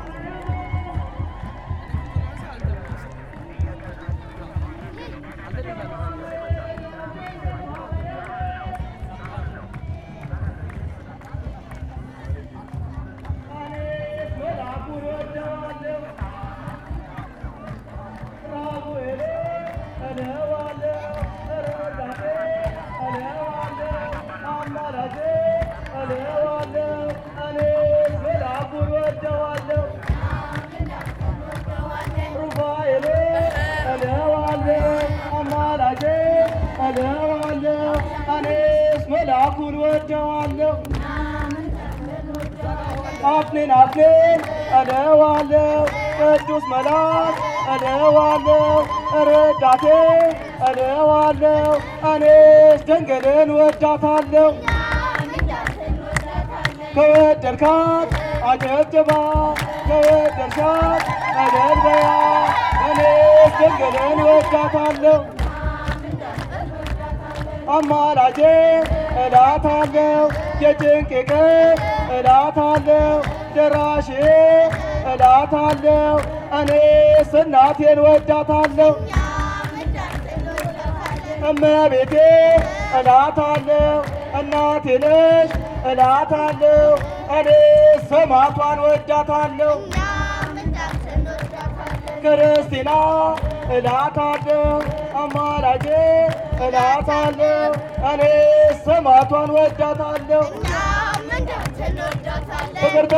Kebena, Addis Ababa, Éthiopie - Timkat celebration
D'habitude, c'est un simple terrain de football sans herbe mais ce lundi matin beaucoup de monde aux vêtements colorés s'est réuni. Les orthodoxes célèbrent Timkat (Epiphanie) depuis la nuit dernière par des prières et des chants. Ce lundi matin, c'est la fin de la cérémonie, des petits groupes se réunissent et chantent. Le premier, de jeunes hommes et femmes jouent successivement du tambour entourés par d'autres femmes et hommes qui chantent a cappella et frappent dans leurs mains. A la fin du son, on entend un autre groupe. Ce sont majoritairement des femmes réunies autour d'un homme qui chante au micro.
Au même moment, à quelques rues plus au Nord, plus de 10.000 personnes (sans doute) sont réunis sur un terrain de foot bien plus grand pour célébrer également Timkat.
Addis Ababa, Ethiopia, 19 January 2015